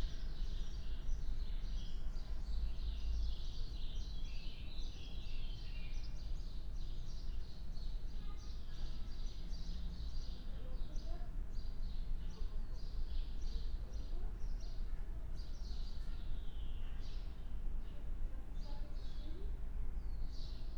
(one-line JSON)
{"title": "Berlin Bürknerstr., backyard window - quiet spring ambience", "date": "2020-05-15 13:30:00", "description": "quite spring ambience in backyard, black cap (Möcnchsgrasmücke) and other birds\n(Raspberry PI Zero / IQAudioZero / Primo EM172)", "latitude": "52.49", "longitude": "13.42", "altitude": "45", "timezone": "Europe/Berlin"}